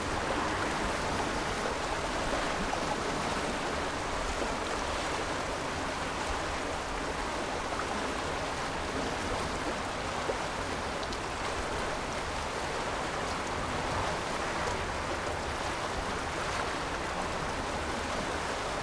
in the Bridge, Sound wave, Traffic Sound, The sound of the sea through the deck below trend
Zoom H6+Rode NT4 SoundMap20141022-43)